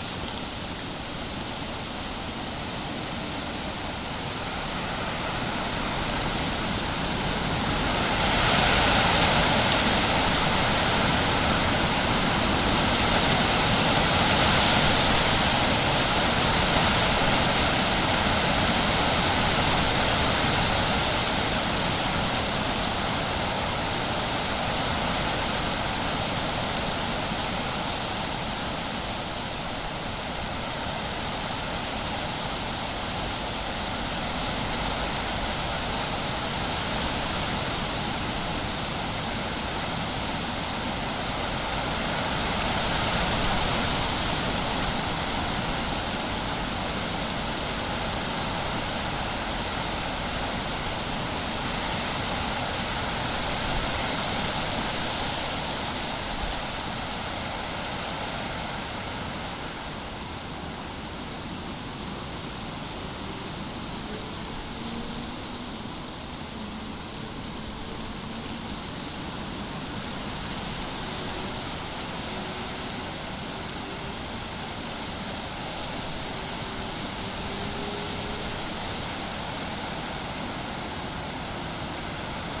Mons, Belgium - Wind in the trees
A strong wind is blowing in the poplar trees. Weather is not very good, would it be a good time to hear a simple wind in the trees ?
October 2017